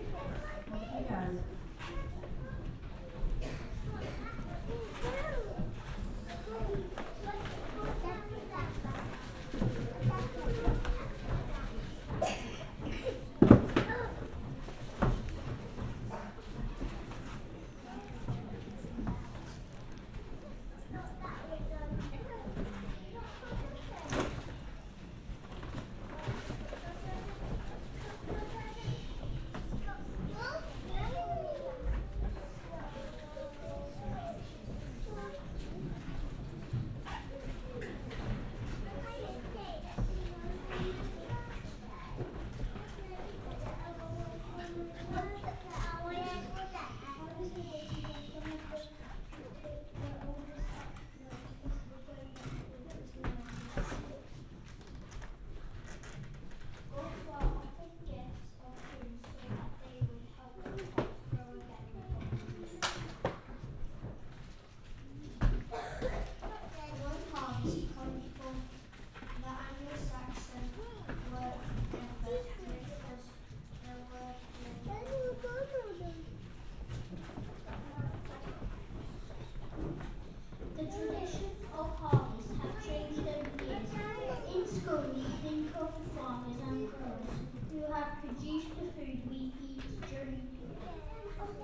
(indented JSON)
{
  "title": "Red Lane Cottage, Oxford Rd, Reading, UK - Harvest at St Leonards",
  "date": "2017-09-17 14:00:00",
  "description": "Sitting with other parents and their young children in the rear gallery of St Leonards Church for harvest festival celebrations with pupils from the local primary school. (Binaural Luhd PM-01s on Tascam DR-05)",
  "latitude": "51.53",
  "longitude": "-1.07",
  "altitude": "163",
  "timezone": "Europe/London"
}